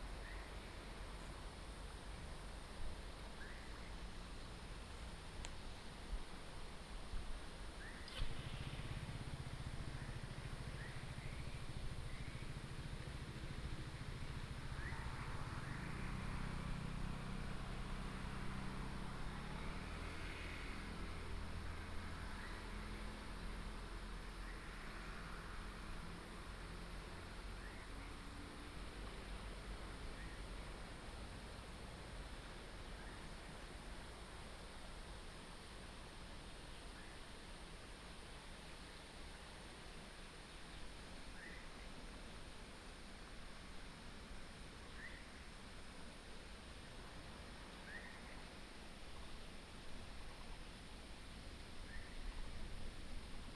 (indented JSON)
{
  "title": "百吉國小, 桃園市大溪區 - Bird and stream",
  "date": "2017-08-09 17:21:00",
  "description": "the stream, Bird call, Traffic sound",
  "latitude": "24.82",
  "longitude": "121.31",
  "altitude": "276",
  "timezone": "Asia/Taipei"
}